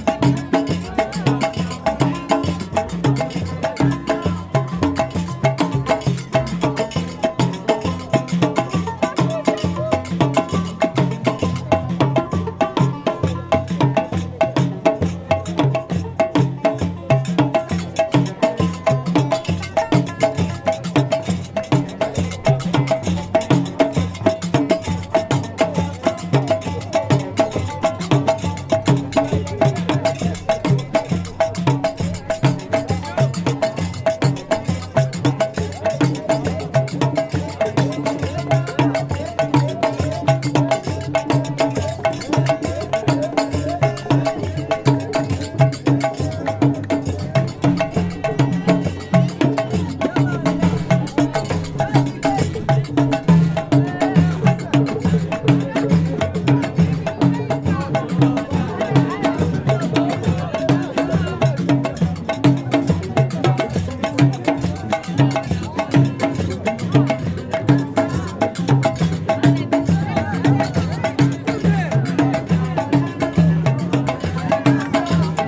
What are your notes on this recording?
Evening night, you can listen musicians